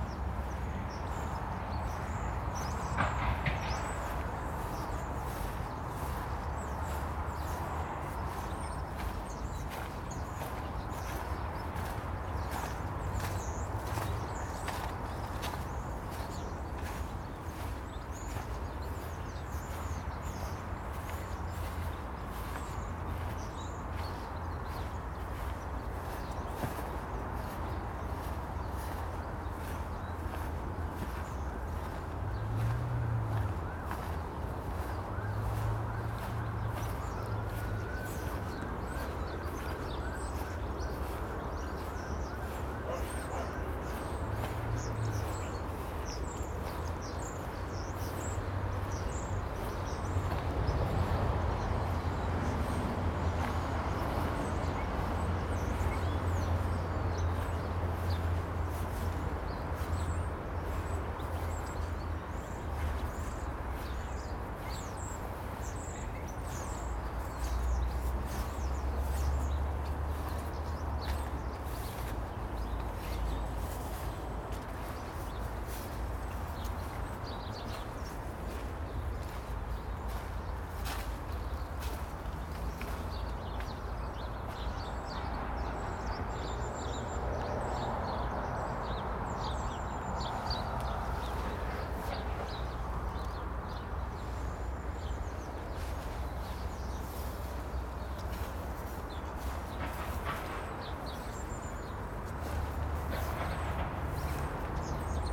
Contención Island Day 3 inner southeast - Walking to the sounds of Contención Island Day 3 Thursday January 7th
The Drive Moor Crescent Moorfield
Stand in the doorway of a shed
A flock of goldfinches flies
into the top of a roadside tree
then drift drop down onto one of the plots